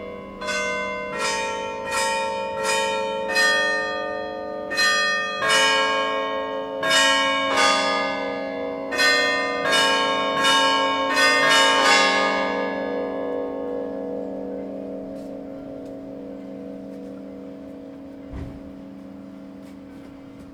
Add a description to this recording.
Ogni giorno, a tutte le ore, come in tutte le Chiese del mondo, suonano le campane.